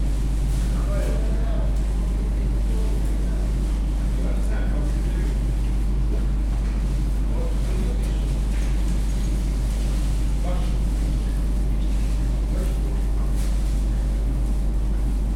Balti Jaama Kohvik, Tallinn, Estonia - Cheburek commons
A genuine blend of pan- and post-Soviet cultures, a low-threshold eatery for all, and a genuine common of sorts, wrapped in the smell and sound of chebureki deep in the frying.
1 March 2014, ~13:00